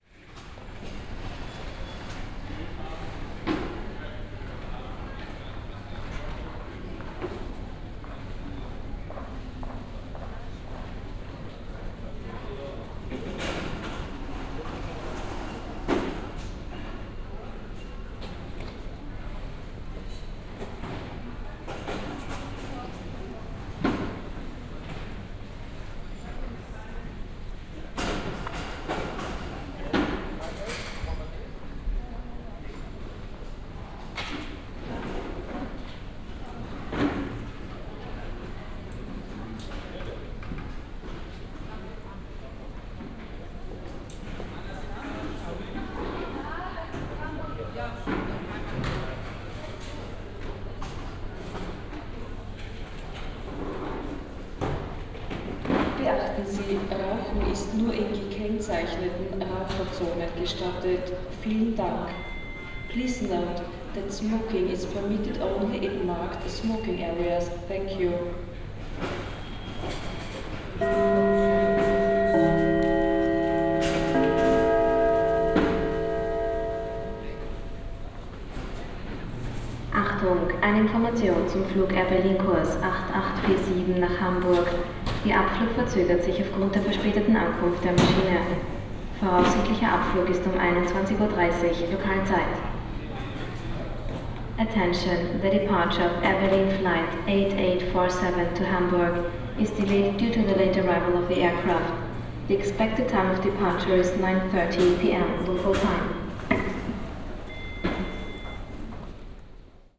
vienna airport west pier, security check area, announcements
Österreich, November 2011